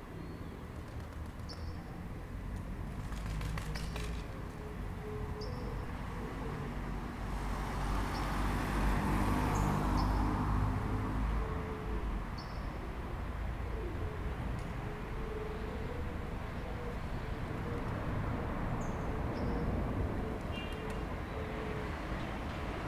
San Martin, Tacna, Peru - A man waiting
Crossing the border between Chile and Peru by night, arriving early in Tacna. Passing my time at the square in front of the church, recording the morning - a city waking up. A man talking and waiting.